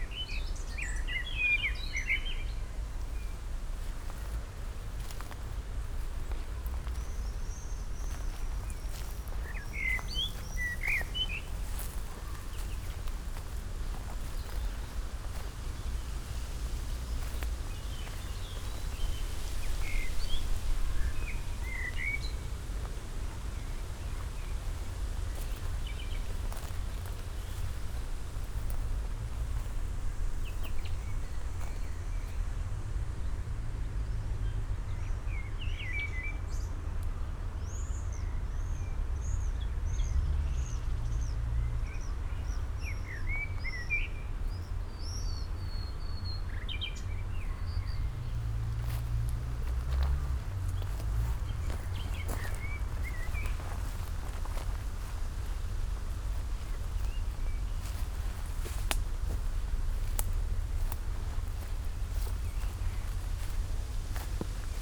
slow walk through the nice garden landscape between Beermanstr. and Kieffholzstr., along a newly build strange sanctuary for lizards. never sen one here before though.
Sonic exploration of areas affected by the planned federal motorway A100, Berlin.
(SD702, DPA4060 binaural)
Berlin, Deutschland, European Union, 17 May 2013, 16:40